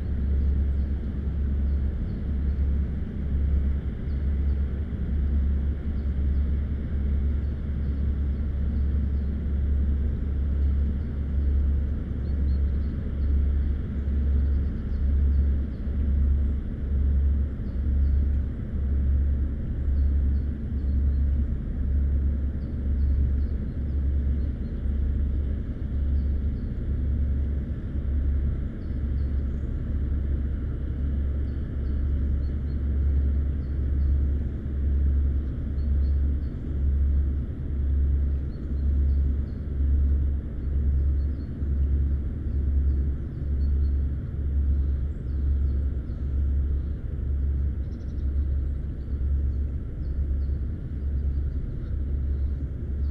Riemst, Belgium - Boats on the Albertkanaal
On a sunny morning, two boats are passing on the Albertkanaal. In first, Figaro from Oupeye, Belgium, (MMSI 205203890, no IMO) a cargo ship, and after Phoenix from Ridderkerk, Netherlands, (MMSI: 244630907, no IMO) an engine dumper.